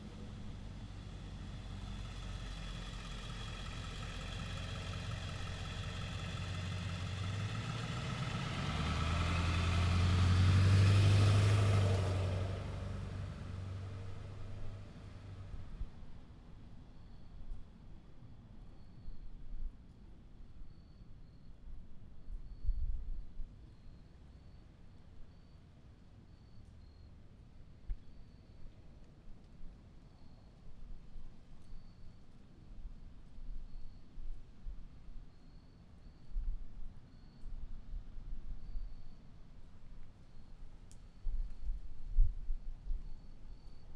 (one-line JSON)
{"title": "Chambersburg, Trenton, NJ, USA - Night in Trenton", "date": "2013-10-08 19:30:00", "description": "Rainy evening in Trenton, cars driving by", "latitude": "40.21", "longitude": "-74.74", "altitude": "16", "timezone": "America/New_York"}